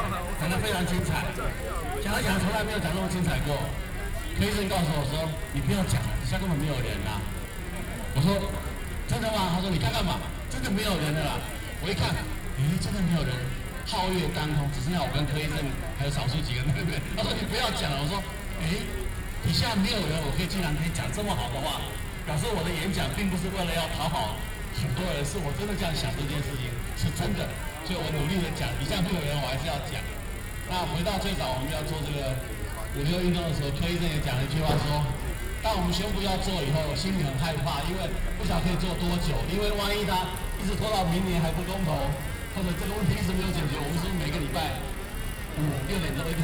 Liberty Square, Taipei - No Nuke
Opposed to nuclear power plant construction, Binaural recordings, Sony PCM D50 + Soundman OKM II
3 May, 19:30